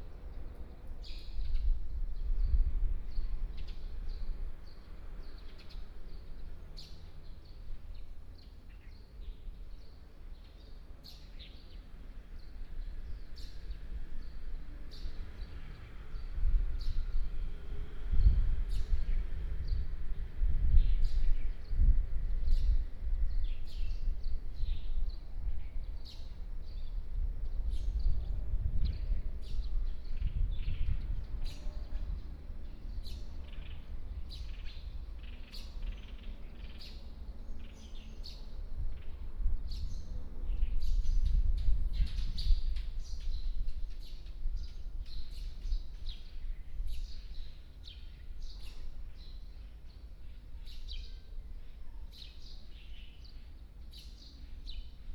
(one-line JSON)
{"title": "勝安宮, 五結鄉錦眾村 - In the temple plaza", "date": "2014-07-29 11:30:00", "description": "In the temple plaza, Traffic Sound, Hot weather, Birds", "latitude": "24.70", "longitude": "121.82", "altitude": "5", "timezone": "Asia/Taipei"}